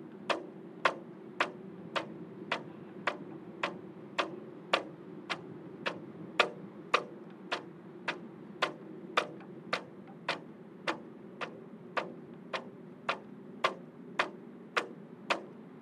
Katwijk-Aan-Zee, Nederlands - Flag in the wind
Katwijk-Aan-Zee, a flag into the wind near the Katwijkse Reddingsbrigade Post Noord.
Katwijk aan Zee, Netherlands, 29 March 2019, 16:20